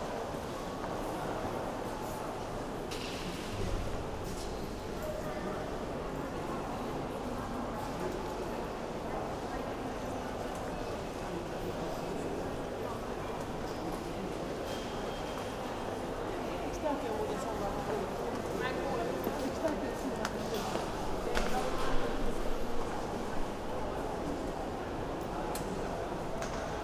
Berlin, Friedrichstr., bookstore - christmas bookstore
surprisingly less crowded bookstore, about 2h before closing time
(Sony PCM D50)